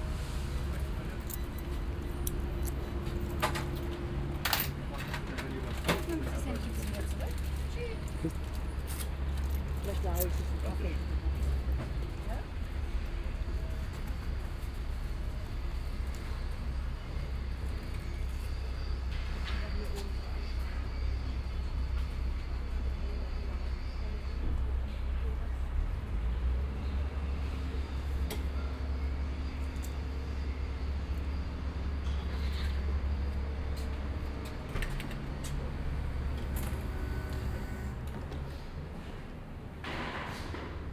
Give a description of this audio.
quick exploration of a city wc, moving in from the outside souvenir stand. a short electric blackout blocked the exit for a while.